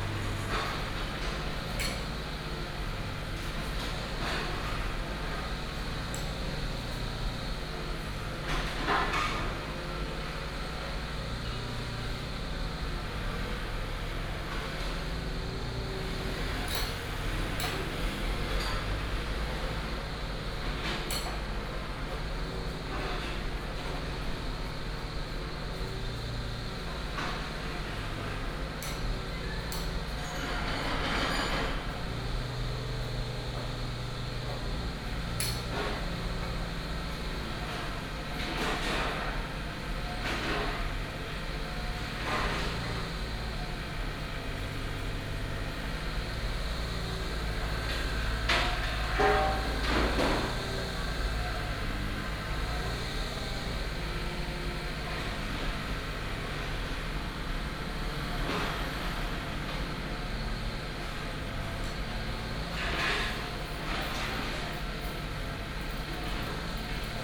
太麻里街74-78號, Tavualje St., Taimali Township - Construction sound
Construction sound, Demolition of the house, Small town street
Binaural recordings, Sony PCM D100+ Soundman OKM II